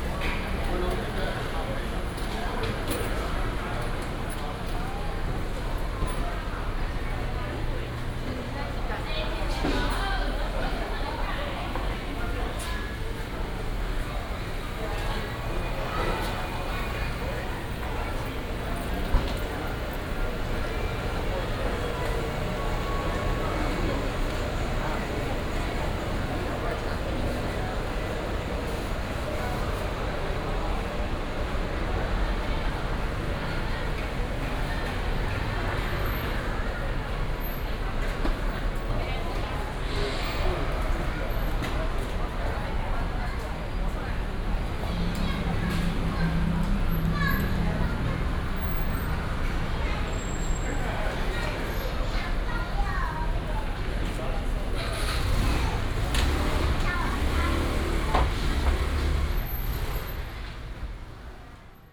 新大慶黃昏市場, Taichung City - walking in the Evening market
walking in the Evening market, Traffic sound